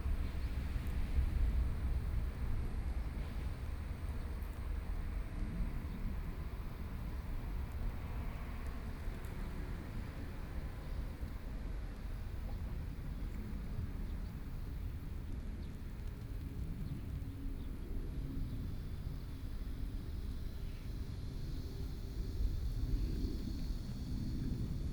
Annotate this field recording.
Small Town, Traffic Noise, Aircraft flying through, Distant thunder hit, Train traveling through, Sony PCM D50+ Soundman OKM II